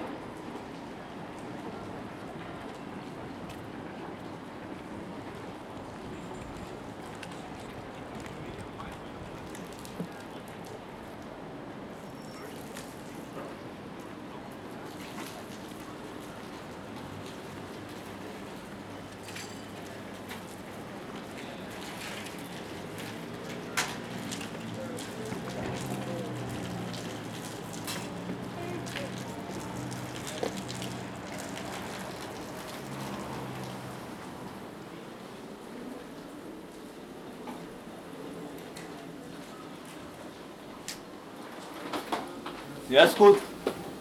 Ton de Leeuwstraat, Amsterdam, Netherlands - conservatorium Amsterdam
recorded and created by Marike Van Dijk